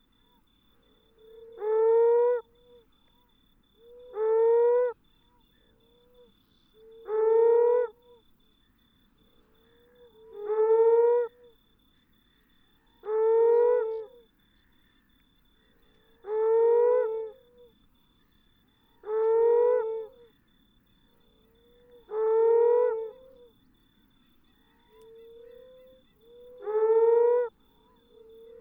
Temeraire Rd, Rottnest Island WA, Australien - Sounds of Moaning Frogs and Paradise Shelducks in the night
Moaning Frogs calling from burrows in the ground. Shelducks calling from nearby lake, on a calm and warm night. Recorded with a Sound Devices 702 field recorder and a modified Crown - SASS setup incorporating two Sennheiser mkh 20 microphones.
Western Australia, Australia, May 2012